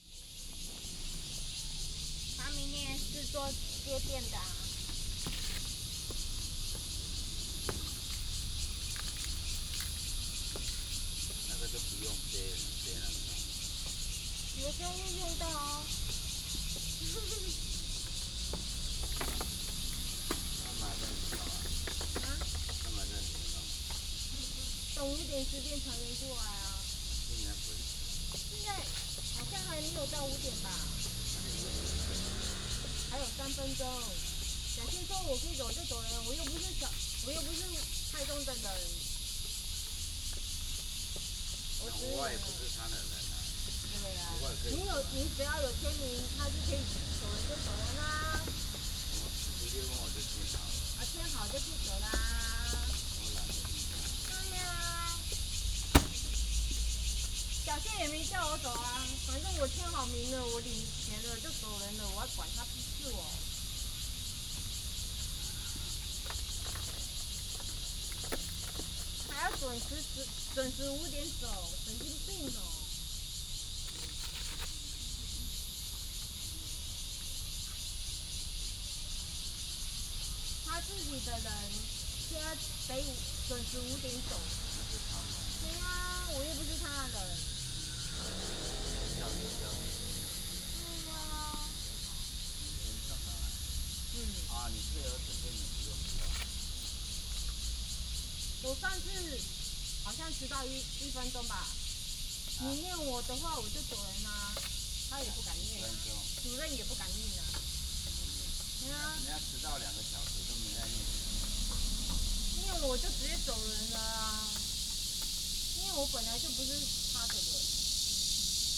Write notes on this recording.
In the parking lot, Off work, Traffic sound, Cicadas, birds sound